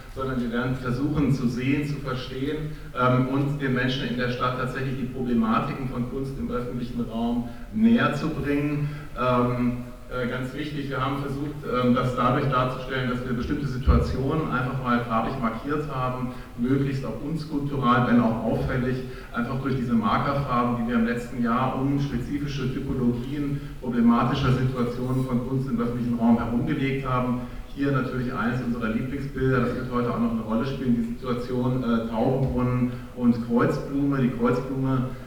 Altstadt-Nord, Köln, Deutschland - cologne, filmforum, cinema
Inside the cinema room of the Filmforum at the Museum Ludwig during the public presentation of the "Urbaner Kongress". The sound of an amplified speech here held by Markus Ambacher in the well carpeted and acoustically damped room atmosphere.
soundmap nrw - social ambiences, art places and topographic field recordings
Deutschland, European Union, 29 June 2013, ~15:00